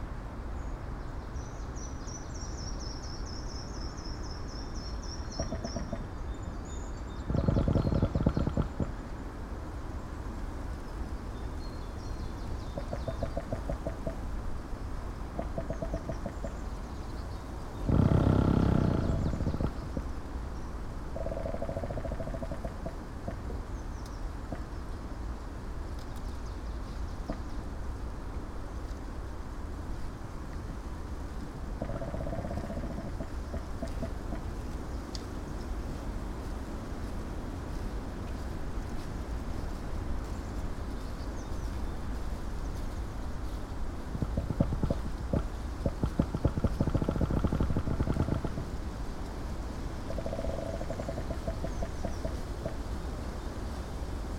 strong wind, beautiful creaking